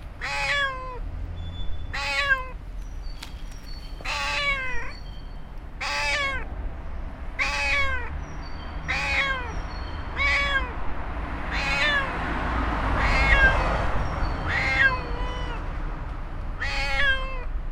Sobbing of the cat in Vinohrady
Lužická street is one of the most beautiful streets of Vinohrady, if not in whole Prague. In both sides it is line with huge trees full of birds. Today was a bit like in the beginning of the spring. When I was walking down the street Ive heard urgent sobbing of a cat. Finally I found the cat in small opening under the entrance. Somebody from the house has said to me, that he knows the cat. But I have to go there tomorrow again. It seems, that the cat can get out from the cellar.
...the other day the cat was gone * so it is safe